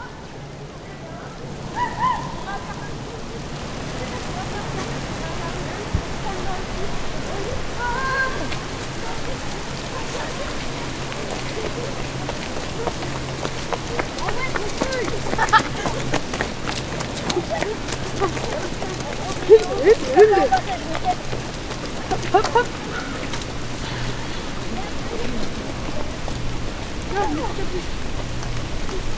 Randonnai, France - Etoile du Perche
Etoile du perche au milieu de la forêt, il pleut, les enfants courrent s'abriter, Zoom H6 et micros Neumann